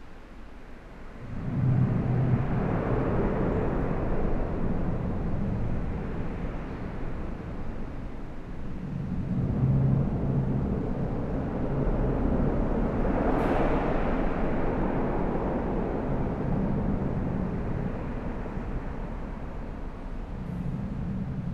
Dinant, Belgium - Charlemagne bridge
Sound of people driving above, from the inside of the bridge. Its the tallest bridge of Belgium.
29 September 2017, ~11:00